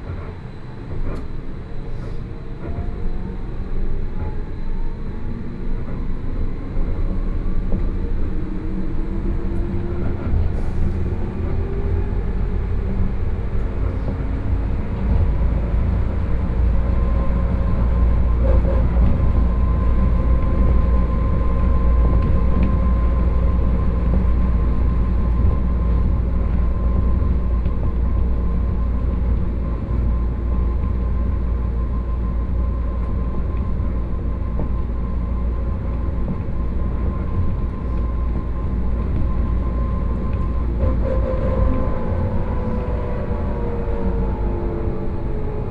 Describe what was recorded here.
from Zhongxiao Fuxing Station to Liuzhangli Station, Sony PCM D50 + Soundman OKM II